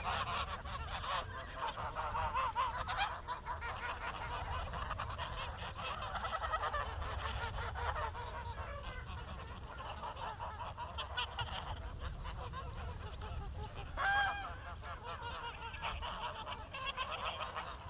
Güstebieser Loose, Neulewin - river Oder dike, a flock of geese
Güstebieser Loose, Oderbruch, at the river Oder, on the dike, listening to a flock of fat geese.
(Sony PCM D50, DPA4060)
Neulewin, Germany, 29 August 2015, ~14:00